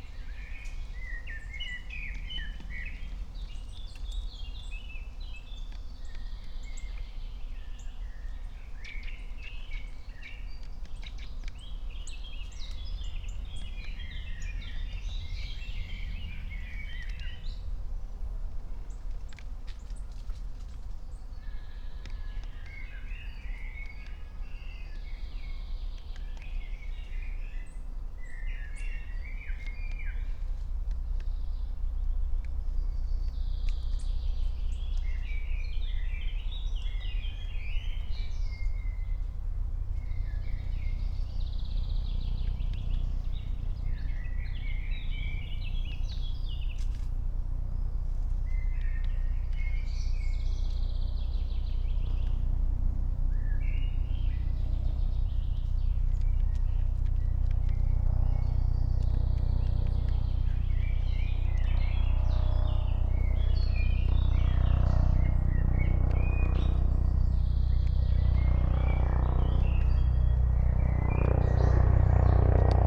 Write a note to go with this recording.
15:39 Berlin, Königsheide, Teich - pond ambience